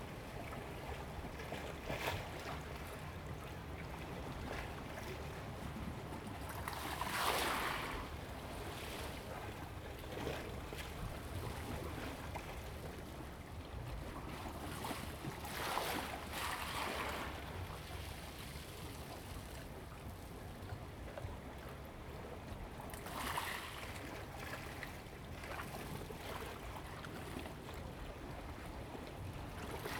Waves and tides
Zoom H2n MS +XY